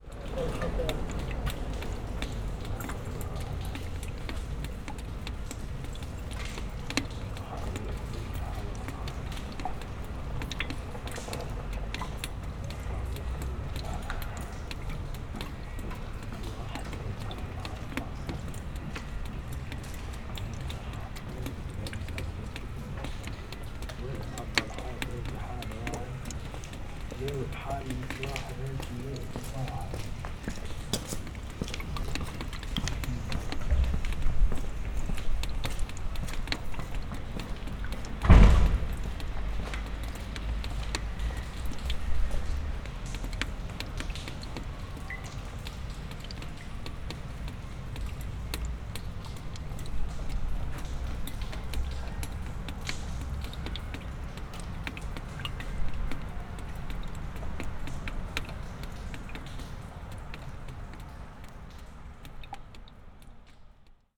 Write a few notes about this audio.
rainwater from a down pipe, the city, the country & me: june 1, 2012, 99 facets of rain